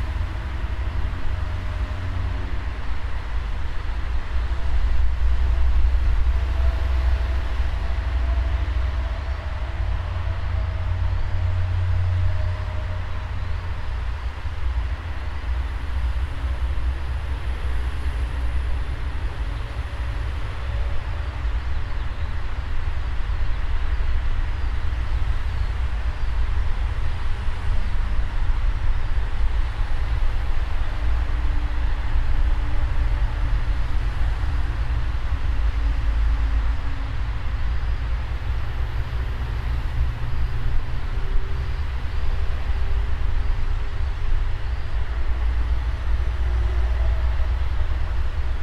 Tempelhof, Berlin, Germany - Tempelhofer Feld - An der Ringbahn
It's almost summer, early in the morning, the larks are very audible, also the traffic from the highway. Commuter trains passing by occasionally.